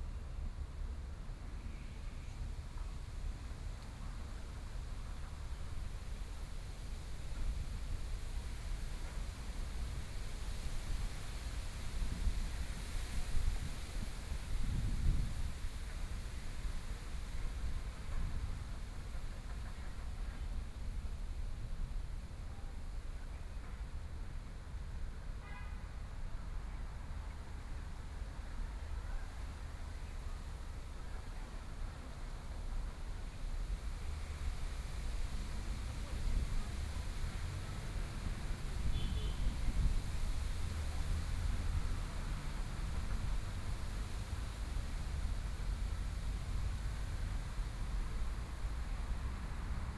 zhongshan easten second road, Huangpu District - in the park
The woman in charge of pulling carts clean sweep, The Bund (Wai Tan), The pedestrian, Traffic Sound, Binaural recording, Zoom H6+ Soundman OKM II